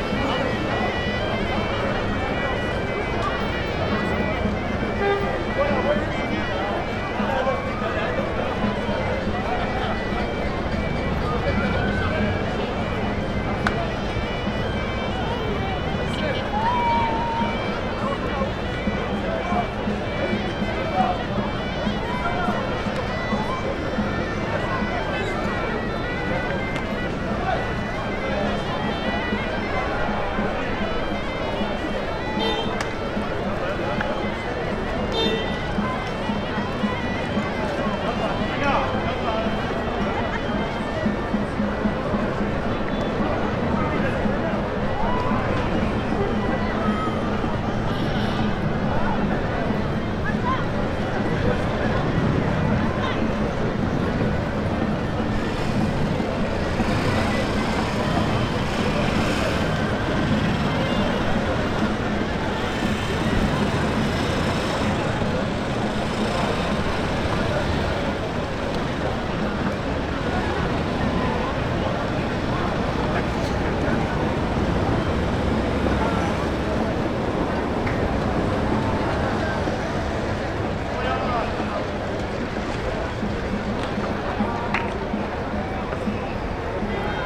Derb Zaari, Marrakech, Marokko - Cafe de France
Recorded from the terrace of the Cafe de France, high above the Jemaa el Fna, the sounds of the square are almost pleasantly relaxing.
Recorded with Sony PCM-D100 with built-in microphones
Marrakech, Morocco, 27 November 2018, ~12:00